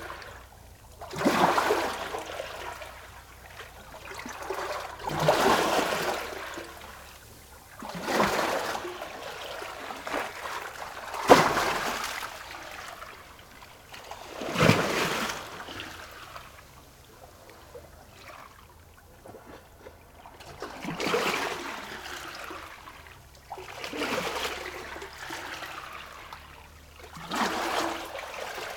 Novigrad, Croatia - square hole
sounds of sea from near the square hole, pebbles and sea foam
18 July 2013, ~22:00